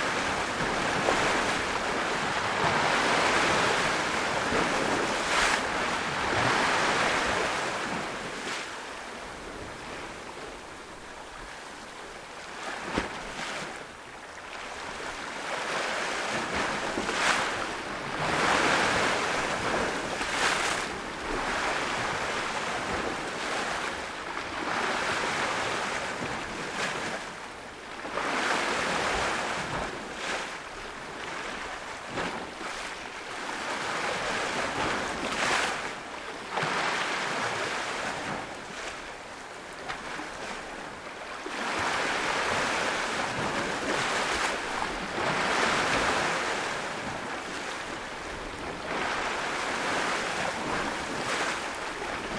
Kıran Mahallesi, Menteşe/Muğla, Turkey - Waves 2
Karya Beach Camp, night time, sounds of waves by the rocks
Unnamed Road, Menteşe/Muğla, Turkey, 31 July 2017